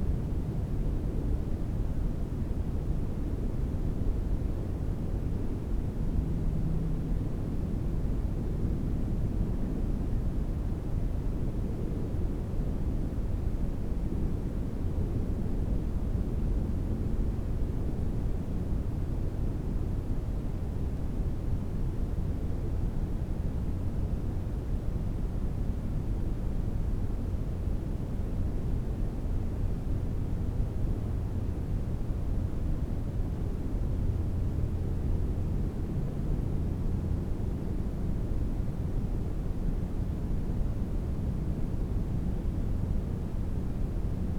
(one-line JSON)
{"title": "Lithuania, Kaciunai mound, in the foxhole", "date": "2011-06-25 15:50:00", "description": "I put the mikes into empty foxhome on the mound. Sounds are: wind outside and tractor in the distance", "latitude": "55.44", "longitude": "25.71", "altitude": "172", "timezone": "Europe/Vilnius"}